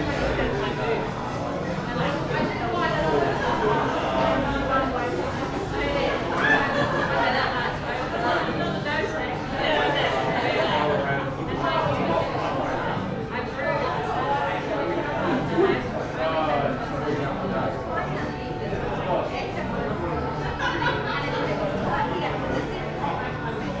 neoscenes: Bar Broadway at lunch
Sydney NSW, Australia